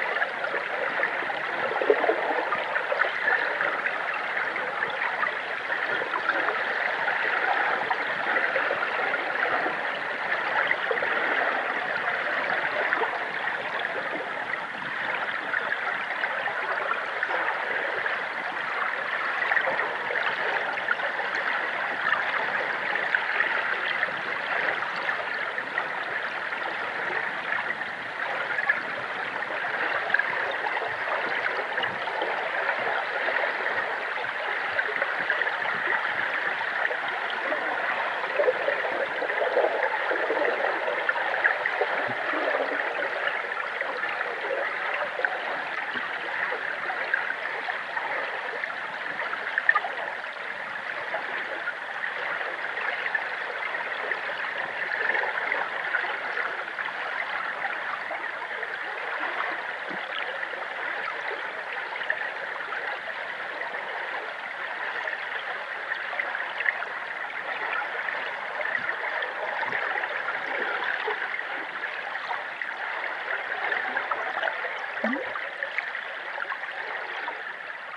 Kelvin Walkway, Glasgow, UK - Kelvin Hydrophone
Recorded on a Sound Devices 633 with an Aquarian Audio H2a Hydrophone